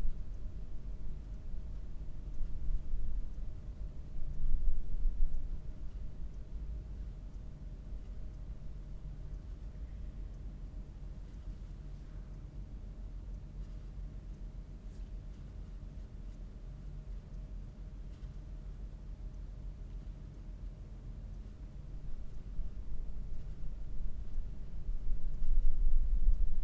Auroville, Matrimandir, Inner chamber
world listening day, Auroville, India, Matrimandir, silence, meditation, inner chamber